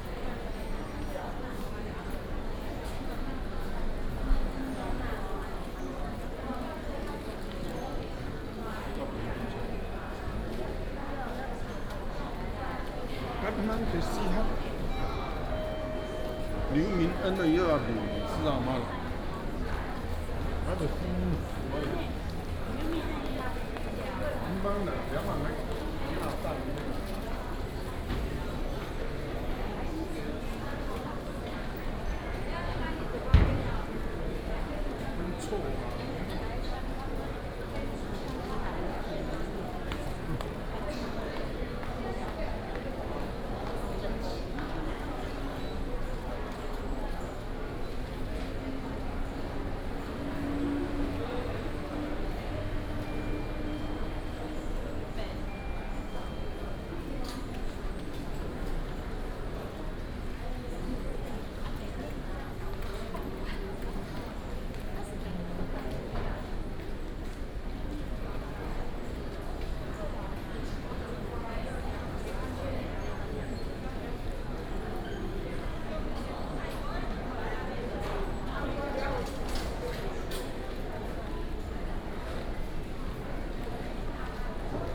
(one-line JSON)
{"title": "MacKay Memorial Hospital, East Dist., Hsinchu City - In the hospital lobby", "date": "2017-09-12 10:58:00", "description": "In the hospital lobby, The counter of the medicine, Binaural recordings, Sony PCM D100+ Soundman OKM II", "latitude": "24.80", "longitude": "120.99", "altitude": "43", "timezone": "Asia/Taipei"}